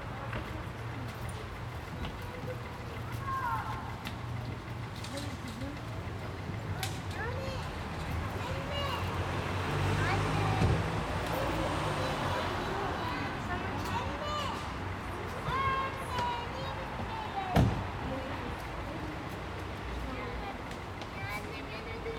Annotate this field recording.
Biesentaler Straße 6, Berlin - Quiet Sunday afternoon. [I used the Hi-MD-recorder Sony MZ-NH900 with external microphone Beyerdynamic MCE 82], Biesentaler Straße 6, Berlin - Ein ruhiger Sonntnachmittag. [Aufgenommen mit Hi-MD-recorder Sony MZ-NH900 und externem Mikrophon Beyerdynamic MCE 82]